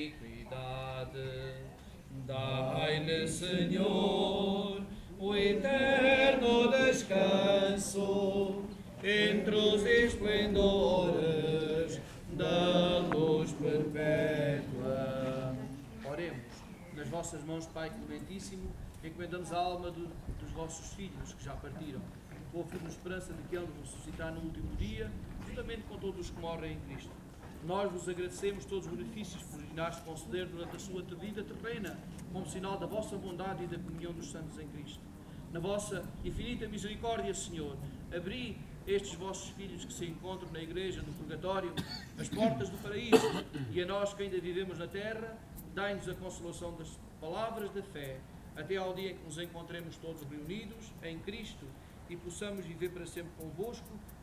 cemetery, Castelo de Santiago do Cacém, Portugal - all saints day, celebration
at Castelo de Santiago do Cacém, Portugal, christian ritual most probably related to All Saints day, lots of wind on the castl (Sony PCM D50, DPA4060)